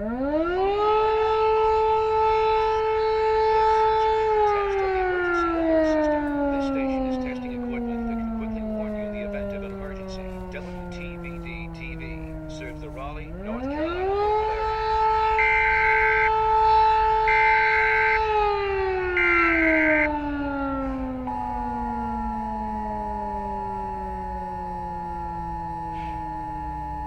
Utena, Lithuania, emergency alarm system test
Sirens in the city. Civil protection/emergency alarm system test. I went closer to sound source (siren) with my son We took a FM radio and recorded all alarms and radio warnings. This was some kind of alarm training for possible nuclear incident in Belorusian power plant.
12 May 2021, 15:00